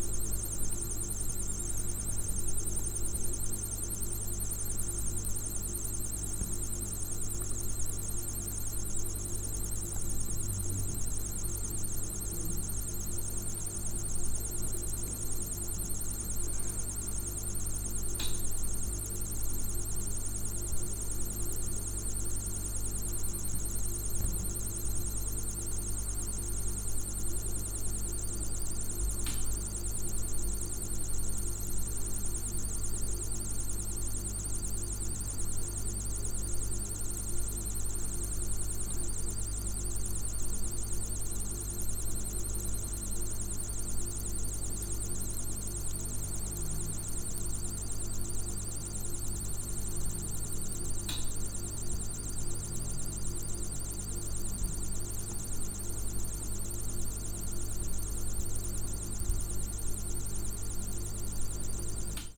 Poznan, Mateckiego street, kitchen - space egg
boiling another egg. this time it's an egg from space i guess. fridge making its point in the backgroud.
Poznań, Poland, 22 December 2012